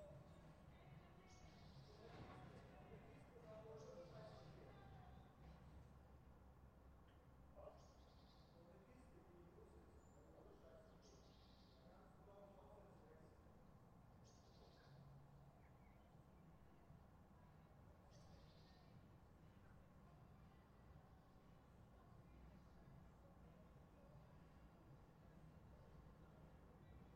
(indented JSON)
{
  "title": "Riehl, Köln, Deutschland - City Sounds with craftsmen and airplane noises",
  "date": "2012-03-05 11:21:00",
  "description": "Craftsmen working, inevtably listening to the radio while airplanes keep coming in. A normal day in the northern part of cologne.",
  "latitude": "50.97",
  "longitude": "6.97",
  "altitude": "47",
  "timezone": "Europe/Berlin"
}